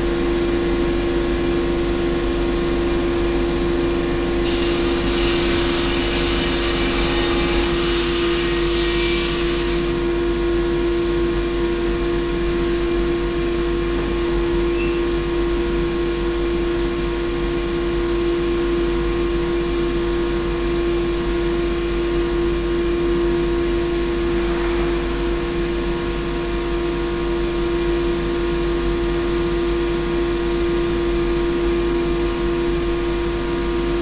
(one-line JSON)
{"title": "cologne, landsbergstreet, wood carving", "date": "2011-11-17 13:29:00", "description": "At a local timber dealer. The sound of wood carving and wood transportation in the workshop patio.\nsoundmap nrw - social ambiences and topographic field recordings", "latitude": "50.93", "longitude": "6.96", "altitude": "52", "timezone": "Europe/Berlin"}